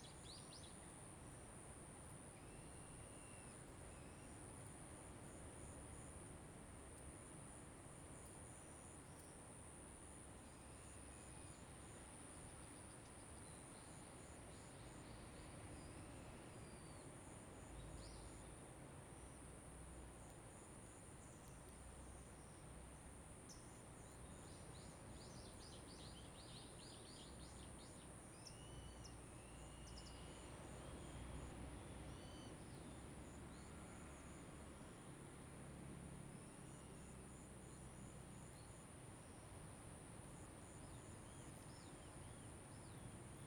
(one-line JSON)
{"title": "New Life Correction Center, Lüdao Township - Abandoned Prison", "date": "2014-10-31 07:18:00", "description": "Waves, In the Square, Birds singing, Abandoned Prison\nZoom H2n MS +XY", "latitude": "22.67", "longitude": "121.50", "altitude": "11", "timezone": "Asia/Taipei"}